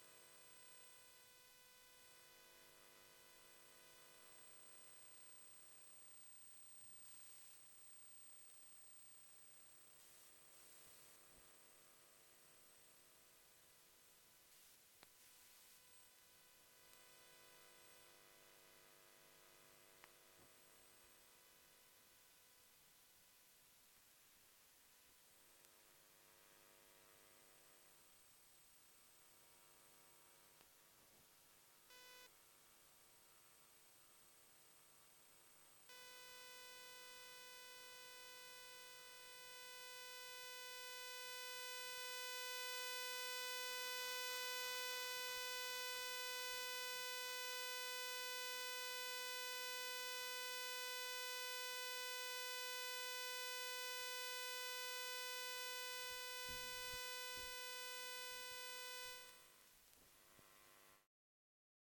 Svalbard, Svalbard and Jan Mayen - SvalSat, Svalbard Satelite Station
The recording is from the electromagnetic noise picked up at the NASA Satelite station.
Longyearbyen, Svalbard and Jan Mayen, 10 October 2011, 12:20